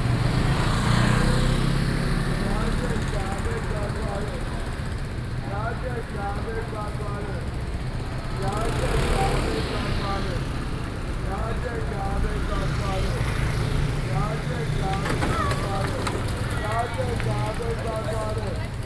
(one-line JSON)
{"title": "Sec., Zhongshan Rd., Sanzhi Dist. - Walking through the market", "date": "2012-06-25 11:36:00", "description": "Traffic Sound, Walking through the market\nSony PCM D50+ Soundman OKM II", "latitude": "25.26", "longitude": "121.50", "altitude": "70", "timezone": "Asia/Taipei"}